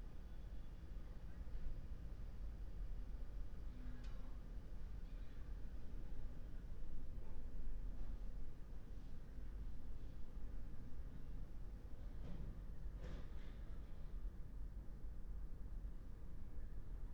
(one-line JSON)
{"title": "Berlin Bürknerstr., backyard window - Hinterhof / backyard ambience", "date": "2021-10-24 19:36:00", "description": "19:36 Berlin Bürknerstr., backyard window\n(remote microphone: AOM5024HDR | RasPi Zero /w IQAudio Zero | 4G modem", "latitude": "52.49", "longitude": "13.42", "altitude": "45", "timezone": "Europe/Berlin"}